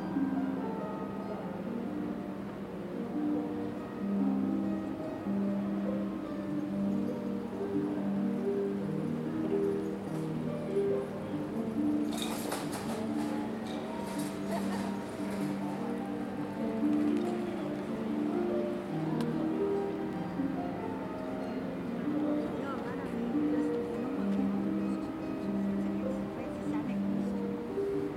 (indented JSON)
{
  "title": "Domplatz, Salzburg, Österreich - Domplatz Salzburg",
  "date": "2021-02-23 15:13:00",
  "description": "Domplatz Salzburg. Streetmusician Harp. People talking.",
  "latitude": "47.80",
  "longitude": "13.05",
  "altitude": "433",
  "timezone": "Europe/Vienna"
}